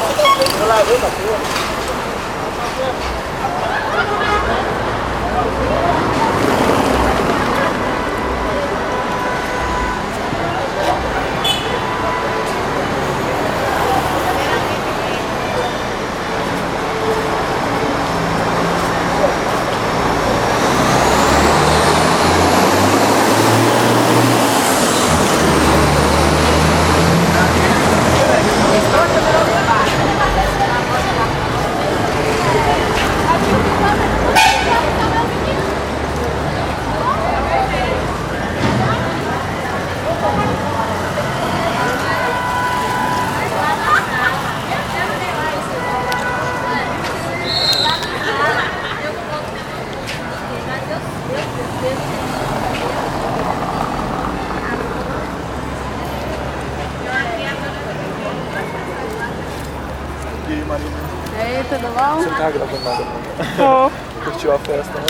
Cachoeira, Bahia, Brazil - Em frente a Universidade Federal do Recôncavo da Bahia - UFRB
Sexta-feira, cinco da tarde, fim de aula. Estou em frente a UFRB olhando e ouvindo os transeuntes e veículos passar.
Gravado com um simples Sony ICD PX312.